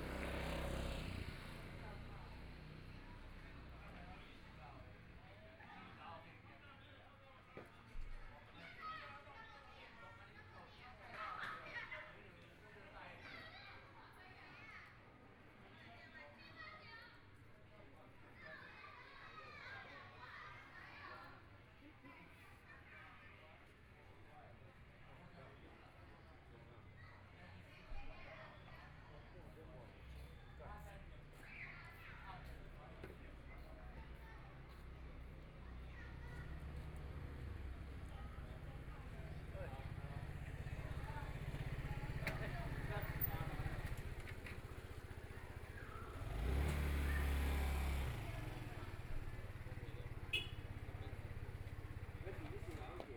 蕃薯村, Shueilin Township - in front of the temple
The plaza in front of the temple, Very many children are playing games, Firecrackers, Motorcycle Sound, Zoom H4n+ Soundman OKM II
Yunlin County, Shuilin Township, 雲151鄉道, 30 January, 22:03